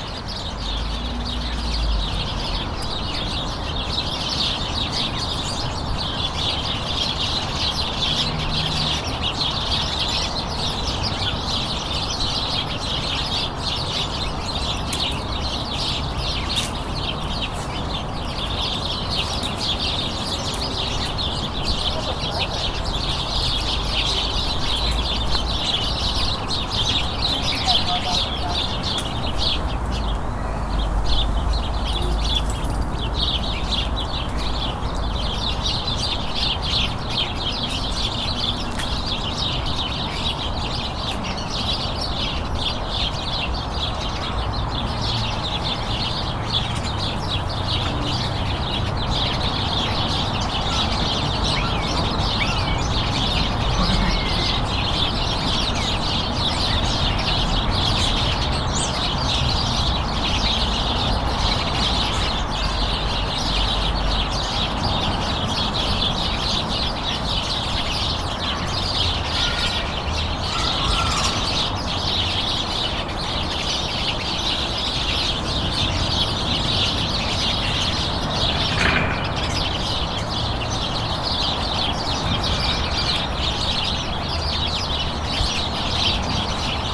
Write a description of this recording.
Birds and local traffic recorded with onboard Zoom H4n microphones